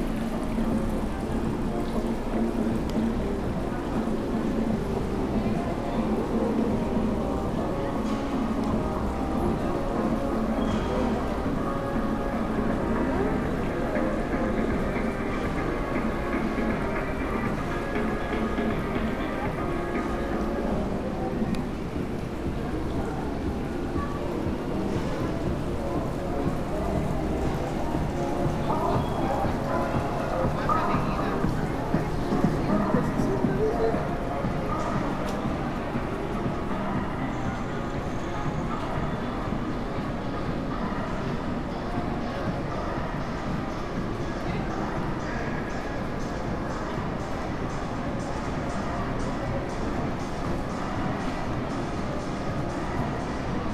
Blvd. Aeropuerto, Cerrito de Jerez Nte., León, Gto., Mexico - Centro comercial Altacia.
Altacia mall.
Walking through the corridors of the mall.
I made this recording on july 24th, 2022, at 12:00 p.m.
I used a Tascam DR-05X with its built-in microphones and a Tascam WS-11 windshield.
Original Recording:
Type: Stereo
Caminando por los pasillos del centro comercial.
Esta grabación la hice el 24 de julio 2022 a las 12:00 horas.